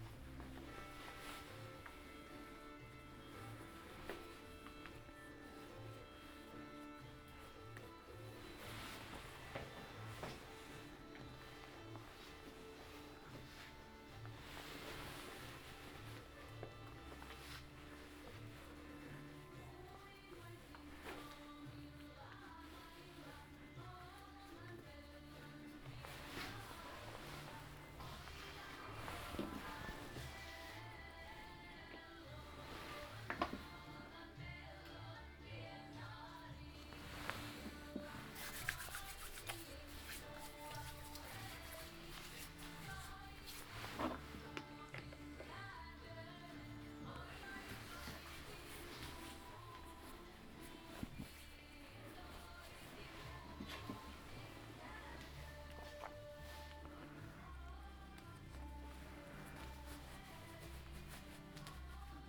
"Shopping Tuesday afternoon in the time of COVID19" Soundwalk
Chapter XXIX of Ascolto il tuo cuore, città, I listen to your heart, city
Tuesday March 31 2020. Shopping in the supermarket at Piazza Madama Cristina, district of San Salvario, Turin 22 days after emergency disposition due to the epidemic of COVID19.
Start at 4:07 p.m., end at h. 4:56 p.m. duration of recording 48’43”
The entire path is associated with a synchronized GPS track recorded in the (kml, gpx, kmz) files downloadable here: